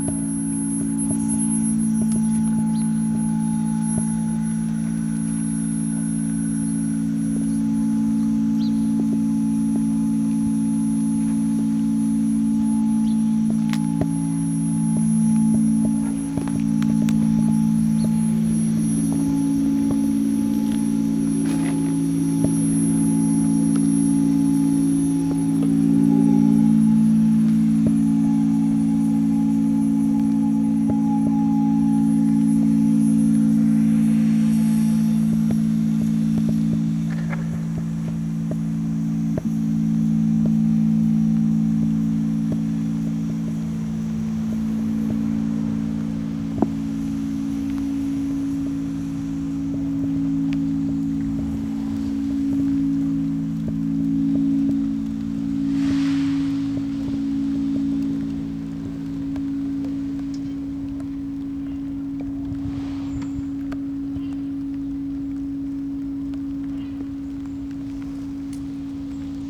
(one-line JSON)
{"title": "water tank, near Parque Cultural de Valparaíso, Chile - sonic activation of a water tank", "date": "2014-12-04 14:09:00", "description": "sonic activation of responding frequencies and resonances in an old water tank during a workshop at Tsonami Festival 2014", "latitude": "-33.05", "longitude": "-71.63", "altitude": "51", "timezone": "America/Santiago"}